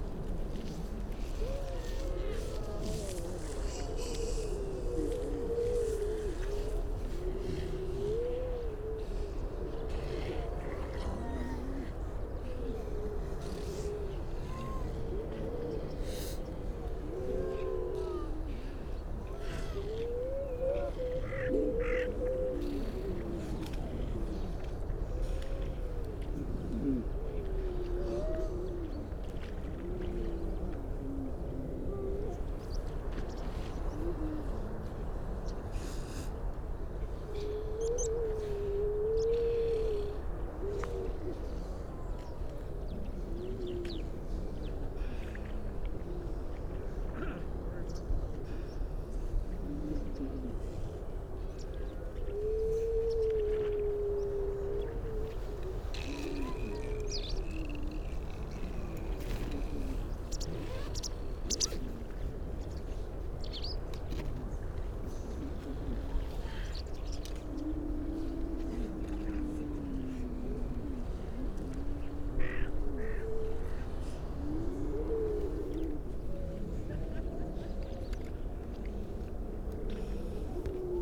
Unnamed Road, Louth, UK - grey seal soundscape ...
grey seal soundscape ... generally females and pups ... parabolic ... bird calls ... skylark ... starling ... pied wagtail ... pipit ... all sorts of background noise ...